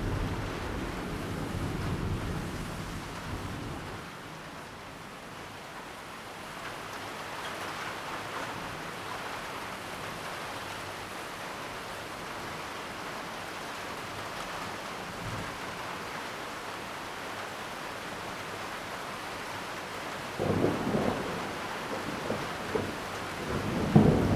大仁街, Tamsui District - Thunderstorm coming
Thunderstorm coming
Zoom H2n Spatial audio
New Taipei City, Taiwan